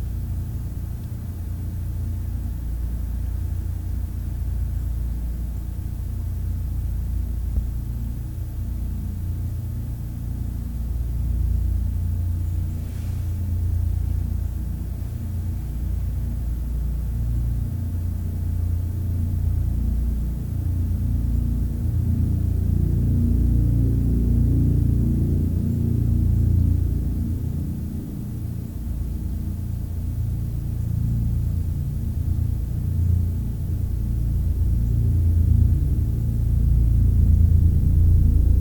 Warren Landing Rd, Garrison, NY, USA - Tree hollow
The drone sound of an airplane captured inside of a tree hollow.